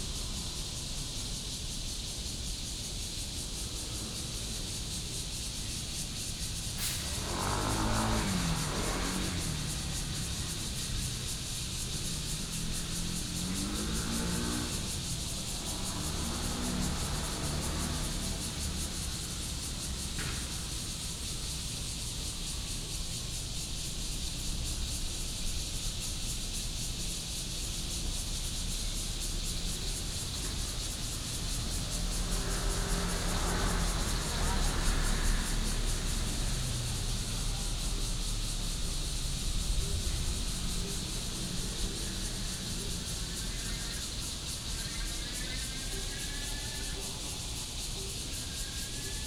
in the Park, Cicadas cry, Bird calls, Traffic Sound
Da’an District, Taipei City, Taiwan, 28 June 2015, 18:45